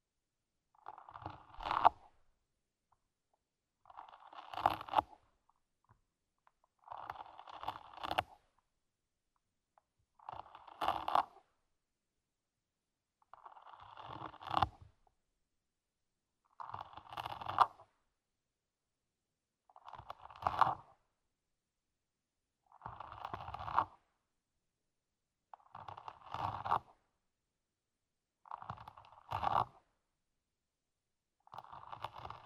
Mont-Saint-Guibert, Belgique - Famished snail eating
Recording of a famished snail, eating a carrot. This poor snail was completely starving in the garden. I embarked him and I gave him a good carrot. At the beginning, he was extremely afraid, but a few time after, he was so happy of this improvised meal !
What you hear is the radula, the snail tongue, scratching methodically the carrot. It was completely magical to hear him on the first seconds, as this is normally inaudible, I let him eating a banquet ! I named him "Gerard" the Snail ;-)
31 May 2016, ~22:00